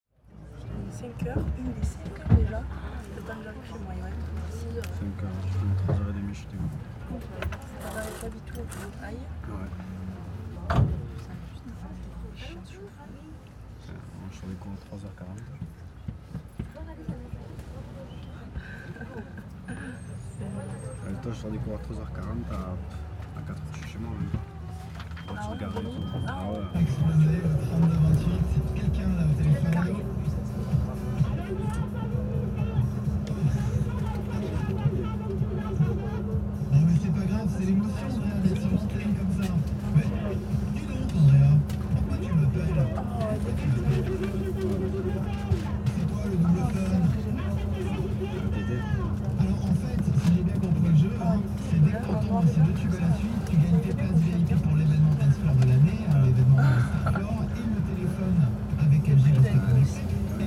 France, Perpignan, on board a bus / a bord d'un bus - On board a bus / A bord d'un bus
On board a bus at the bus stop.
Listen to the signal level rising.
At first, almost no background noise except people's conversations. Then the radio goes up as the driver switches on the ignition (+17 dB), then he turns on the air conditioning and starts driving (+6 dB).
September 2009